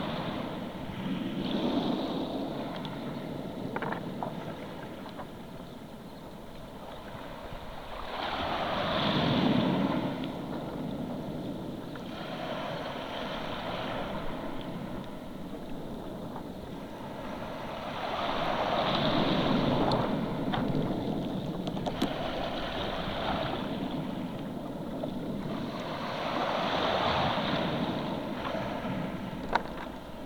Kefalos, Greece, seachore hydrophones
hydrophones placed in the sand of seachore
12 April 2016, 14:00